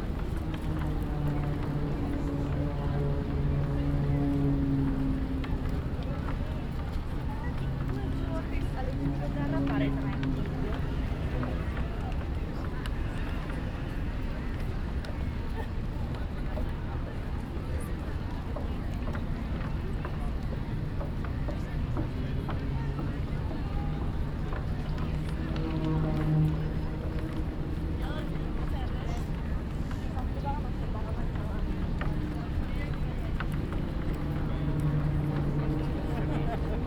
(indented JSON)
{
  "title": "Port Vell, Barcelona - walk (binaural)",
  "latitude": "41.38",
  "longitude": "2.18",
  "altitude": "9",
  "timezone": "Europe/Berlin"
}